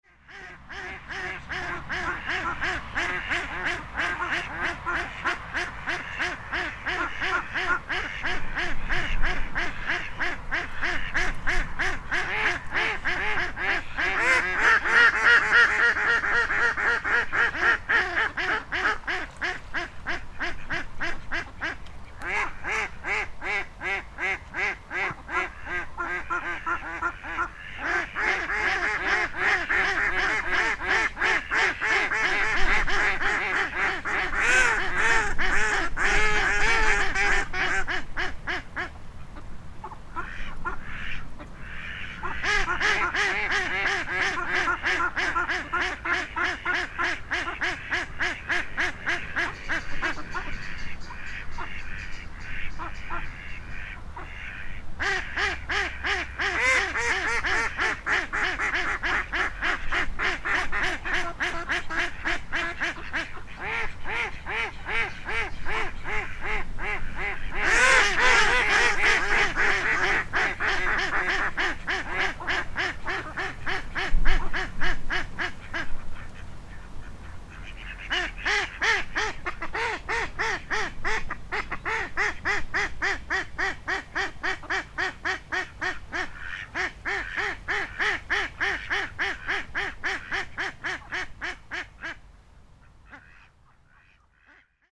Avenue Alexandre de Lavergne, Merville-Franceville-Plage, France - Ducks
Ducks at Merville-Franceville-Plage, Zoom H6